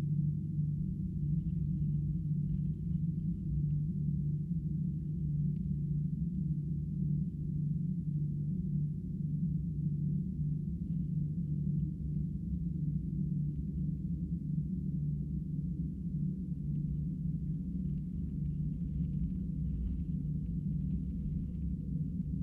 Old Concrete Rd, Penrith, UK - Wind in wires
Wind in electricity wires. recorded with 2x hydrophones